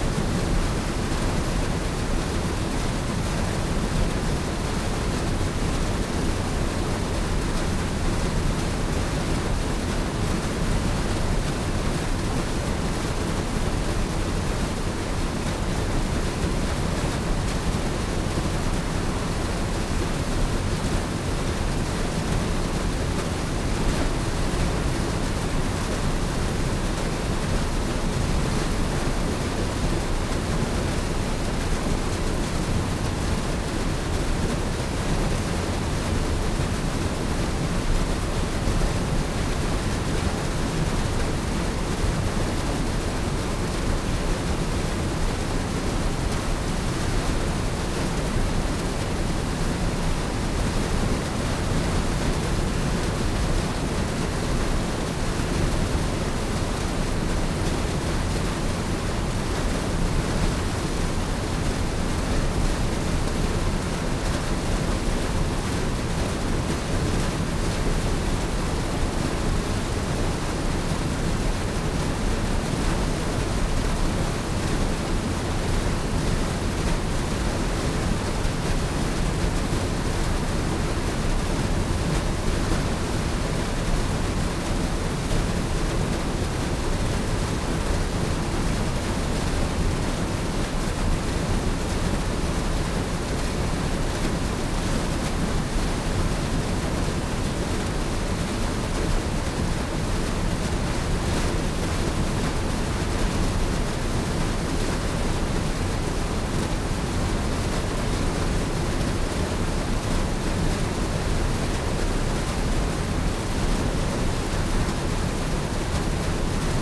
Reading, UK - mill race ...
mill race ... the old mill ... dpa 4060s clipped to bag to zoom h5 ... on the walkway above the sluices ...